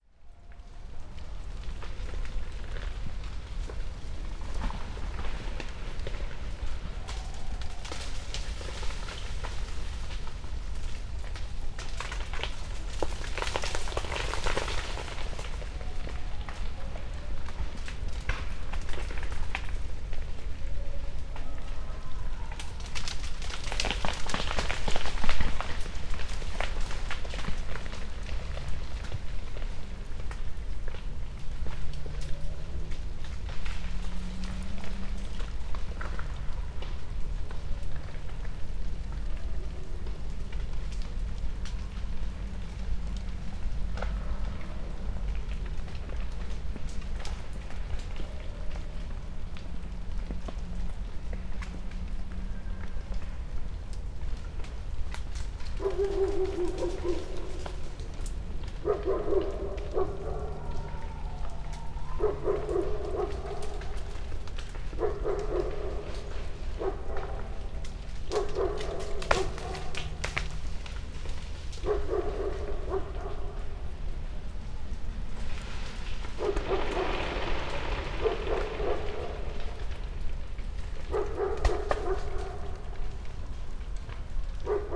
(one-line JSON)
{"title": "path of seasons, upper pond, piramida, maribor - ice flux", "date": "2014-02-05 17:27:00", "description": "with distant sounds of barking dog and tennis hall users", "latitude": "46.58", "longitude": "15.65", "timezone": "Europe/Ljubljana"}